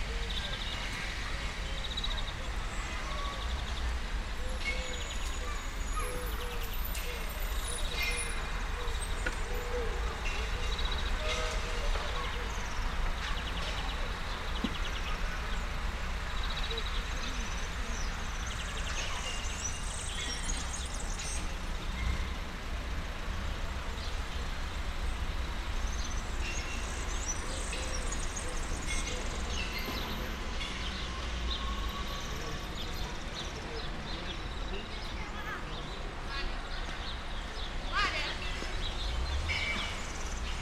{"title": "Alameda da Encarnação, Lisboa, Portugal - Garden Sounds - Garden Sounds", "date": "2018-06-17 17:48:00", "description": "Small garden near a church in Encanrnação, Lisbon. People, birds and traffic. Recorded with a pair of matched primos 172 into a mixpre6.", "latitude": "38.77", "longitude": "-9.12", "altitude": "75", "timezone": "Europe/Lisbon"}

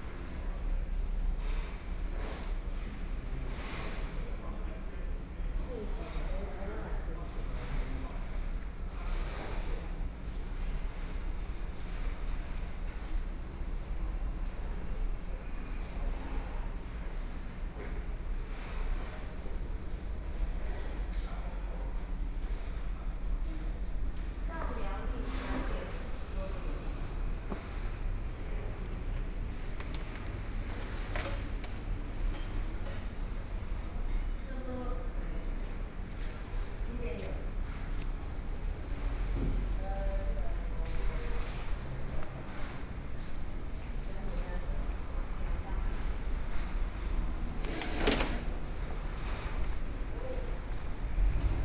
{"title": "Taichung Station, Taiwan - soundwalk", "date": "2013-10-08 08:00:00", "description": "From the station hall through the underground passage to the station platform, Railway construction noise, Station broadcast messages, Zoom H4n+ Soundman OKM II", "latitude": "24.14", "longitude": "120.69", "altitude": "81", "timezone": "Asia/Taipei"}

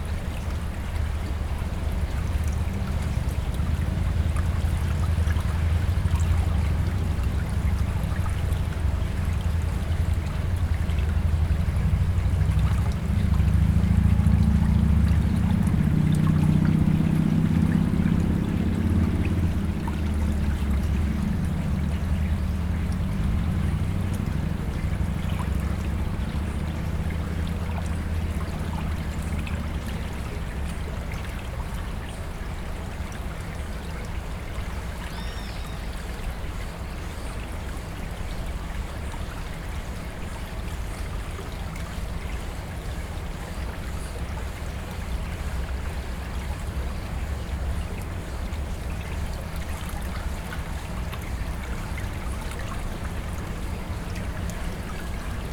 {"title": "Pina, Recife - PE, República Federativa do Brasil - Pina Mangrove 02", "date": "2012-10-17 10:11:00", "description": "First Recordings about the project Mangroves sound. Record using a H4n with the coworker Hugo di Leon.", "latitude": "-8.10", "longitude": "-34.89", "altitude": "8", "timezone": "America/Recife"}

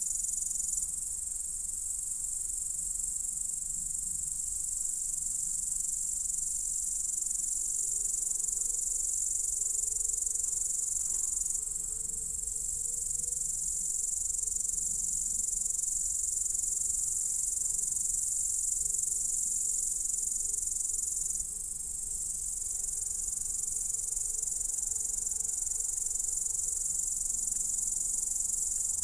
{"title": "Bėdžiai, Lithuania, in the grass (lows appearing)", "date": "2022-08-03 18:20:00", "description": "High grass in the forest...high sounds of insects...lows appear - lows are so human...", "latitude": "55.60", "longitude": "25.48", "altitude": "94", "timezone": "Europe/Vilnius"}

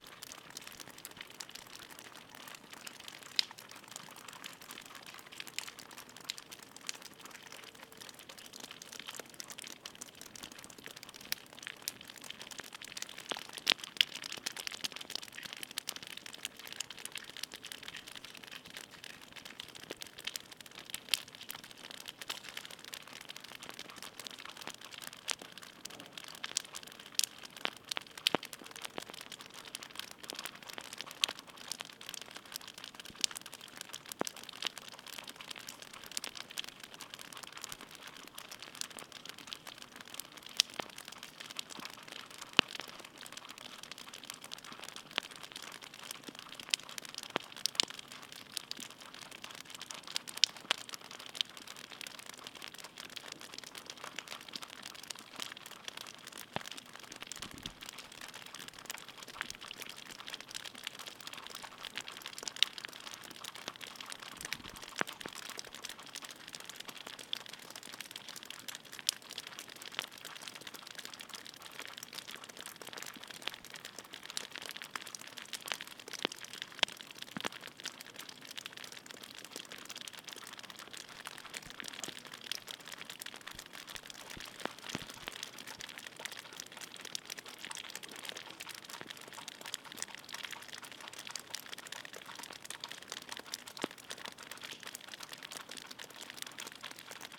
{"title": "Bogart Hall, Ithaca, NY, USA - Ice melt (stereo mix)", "date": "2021-02-17 12:30:00", "description": "Drips of water from icicles on the roof of Bogart Hall, snow crashes occasionally\nRecorded with a Sennheiser ME 66 (panned slightly left) and a hydrophone (panned slightly right)", "latitude": "42.42", "longitude": "-76.49", "altitude": "503", "timezone": "America/Toronto"}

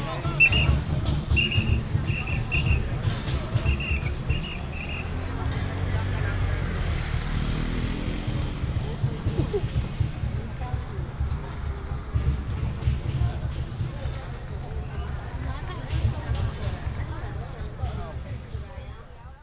{"title": "Guanghua Rd., Shalu Dist. - Directing traffic", "date": "2017-02-27 10:30:00", "description": "Matsu Pilgrimage Procession, Traffic sound, A lot of people, Directing traffic, Whistle sound, Gongs and drums", "latitude": "24.23", "longitude": "120.56", "altitude": "16", "timezone": "Asia/Taipei"}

